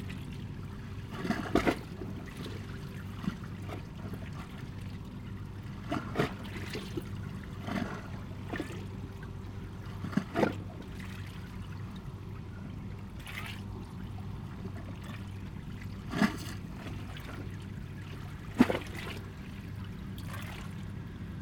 Riemst, Belgique - The Albert canal
One of my favourite place : at night it's cold, snuggled in a sleeping bag, drinking an hot infusion, looking to the barges driving on the canal, far away the very beautiful Kanne bridge. One of the boat was the Puccini from Remich (Luxemburg, MMSI: 205522890), and I sound-spotted it driving 3 times !